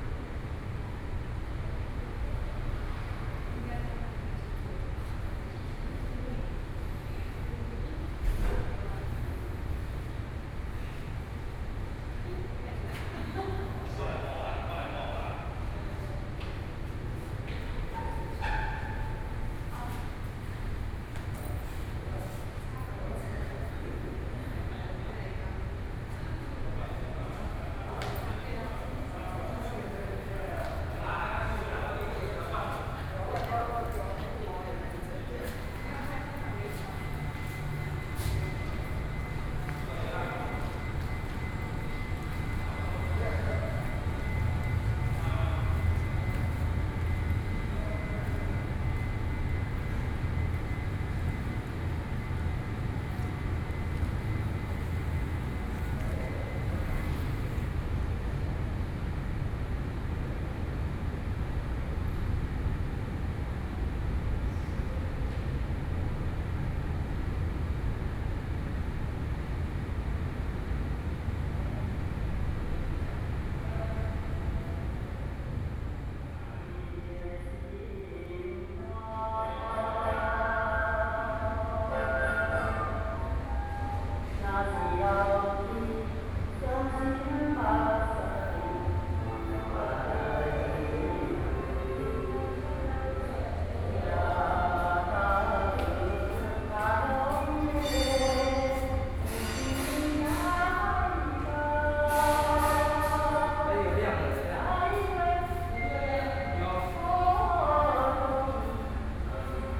Tina Keng Gallery, Neihu, Taipei City - inside the gallery
In the gallery, Workers are repairing the door, Artists are repairing his artworks, Sony PCM D50 + Soundman OKM II